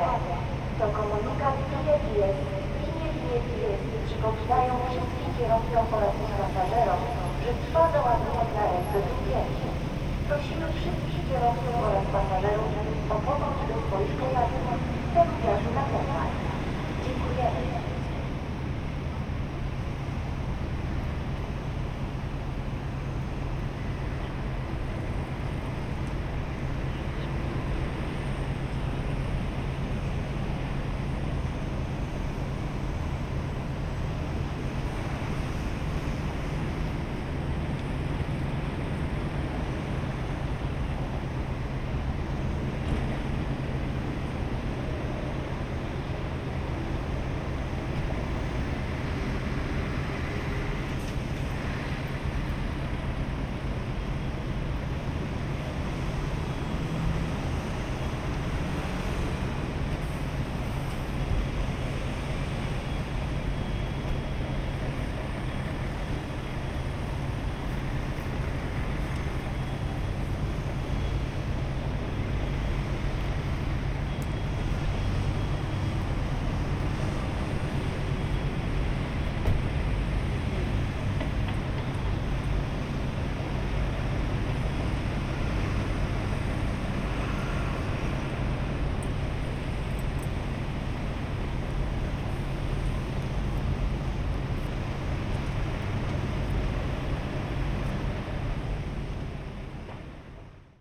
Dover, Dover, Vereinigtes Königreich - Dover ferry terminal
Dover ferry terminal, lorries, PA announcements for DFDS departure. Ambisonic recording, converted to binaural. Use of headphones recommended.
Zoom H3-VR